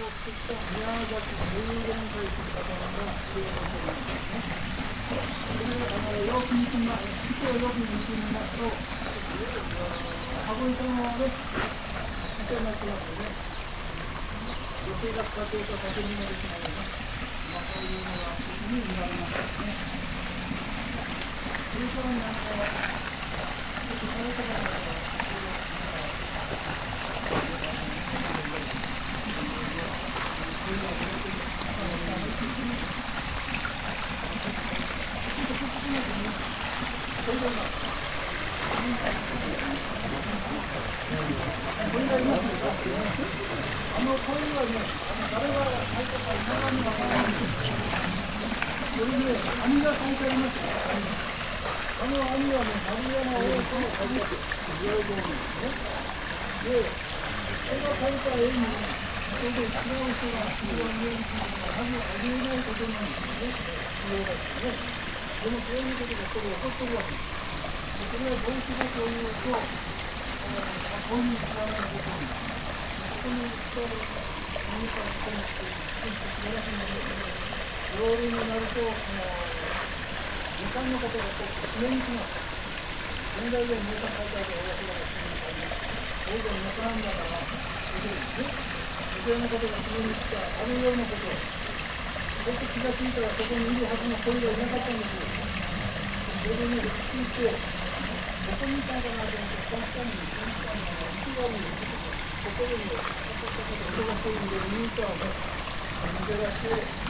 waterish areas, Shugakuin Imperial Villa, Kyoto - streams
gardens sonority
dark green lights, curves of water from all directions, liquid flow
Kyōto-fu, Japan, 1 November 2014, ~16:00